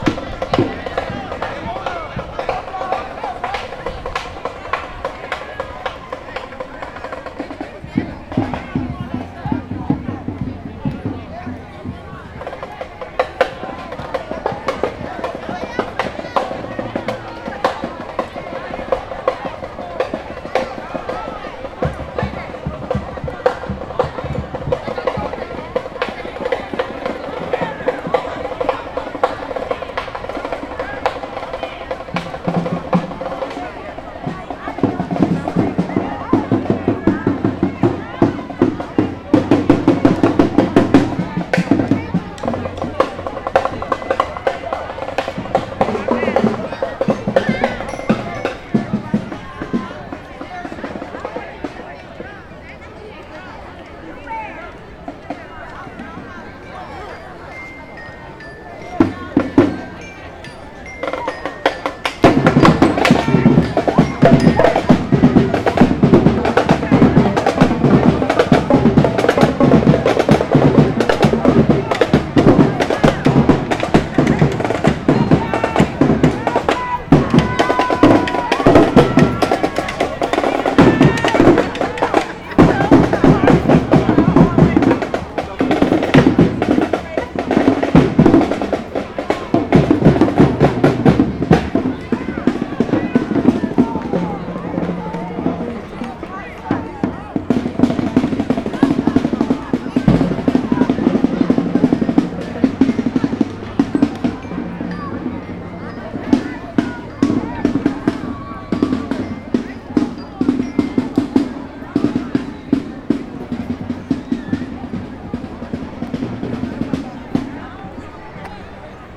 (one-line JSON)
{"title": "Washington Park, South Doctor Martin Luther King Junior Drive, Chicago, IL, USA - drums at end of parade route", "date": "2013-08-10 15:15:00", "description": "Walking the after the Bud Biliken Day parade. Listening to the drums of high school students.", "latitude": "41.79", "longitude": "-87.61", "altitude": "185", "timezone": "America/Chicago"}